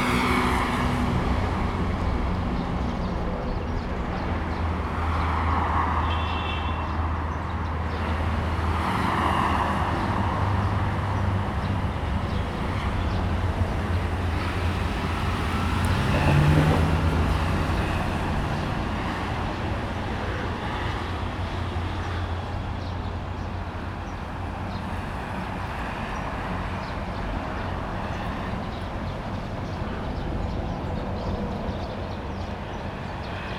next to the high-speed road, Traffic sound, The sound of birds
Zoom H2n MS+XY
Changhua County, Taiwan, 15 February 2017